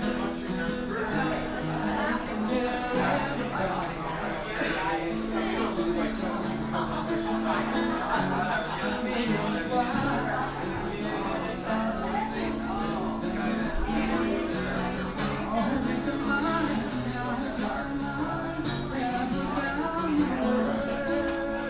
Pleasanton Hwy., Bear Lake, MI - Restaurant Interior as Snow Descends
A big crowd is gathered on an early Wednesday night, as a ton of fresh snow blankets the outdoors. Hubbub and live music at Grille 44, currently the only bar and restaurant open evenings in Bear Lake. Stereo mic (Audio-Technica, AT-822), recorded via Sony MD (MZ-NF810, pre-amp) and Tascam DR-60DmkII.